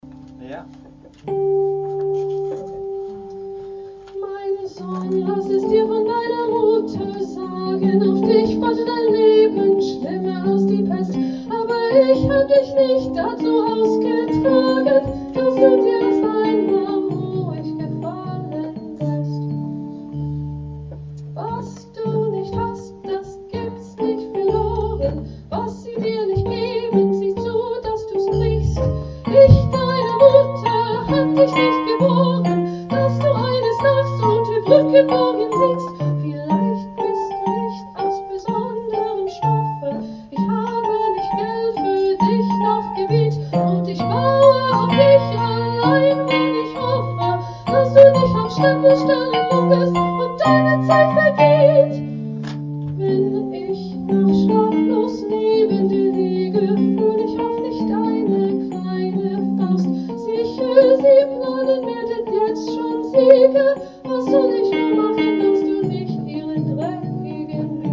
Concert at Der Kanal, Weisestr. - Der Kanal, Season of Musical Harvest: extract of the Vier Wiegenlieder für Arbeitermütter
Composed by Hanns Eisler on the lyrics of Bertolt Brecht, the Wiegenlieder were first sung in 1932. Here we hear them again and stand in awe as singer JESS GADANI is giving her interpretation. The summer was odd, yet, the season of musical harvest starts promising.